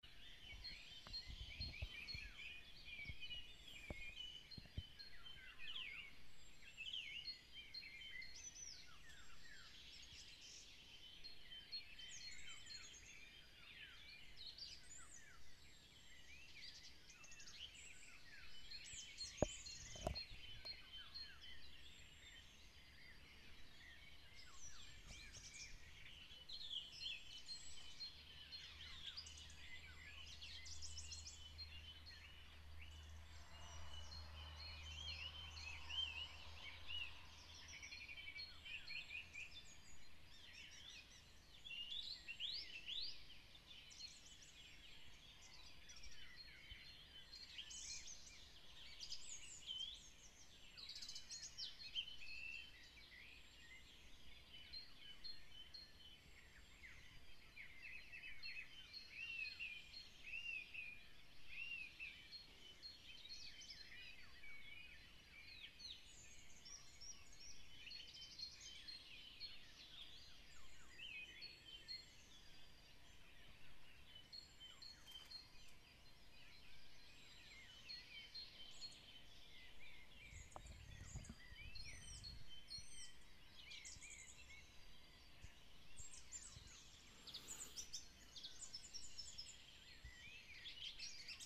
Gmina Wąwolnica, Polen - excerpt of bird concert
a very multifacetted concert by birds in the early morning
Łąki, Poland, 5 September 2014